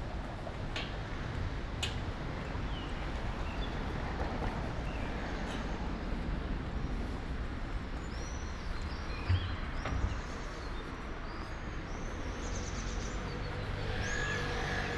Ascolto il tuo cuore, città. I listen to your heart, city. Several Chapters **SCROLL DOWN FOR ALL RECORDINGS - “La flânerie après trois mois aux temps du COVID19”: Soundwalk
“La flânerie après trois mois aux temps du COVID19”: Soundwalk
Chapter CIII of Ascolto il tuo cuore, città. I listen to your heart, city
Wednesday, June 10th 2020. Walking in the movida district of San Salvario, Turin ninety-two days after (but day thirty-eight of Phase II and day twenty-five of Phase IIB and day nineteen of Phase IIC) of emergency disposition due to the epidemic of COVID19.
Start at 7:31 p.m., end at h. 8:47 p.m. duration of recording 38'23'', full duration 01:15:52 *
As binaural recording is suggested headphones listening.
The entire path is associated with a synchronized GPS track recorded in the (kml, gpx, kmz) files downloadable here:
This soundwalk follows in similar steps to exactly three months earlier, Tuesday, March 10, the first soundtrack of this series of recordings. I did the same route with a de-synchronization between the published audio and the time of the geotrack because:
Piemonte, Italia, June 2020